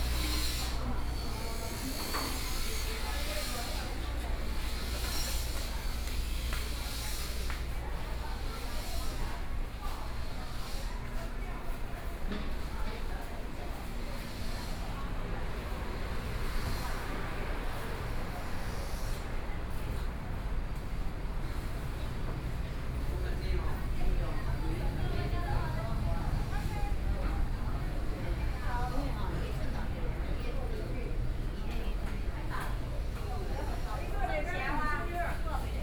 Yilan City, Taiwan - Traditional Market
Walking in the building's traditional markets, From the ground floor to the first floor, Binaural recordings, Zoom H4n+ Soundman OKM II
5 November 2013, 09:11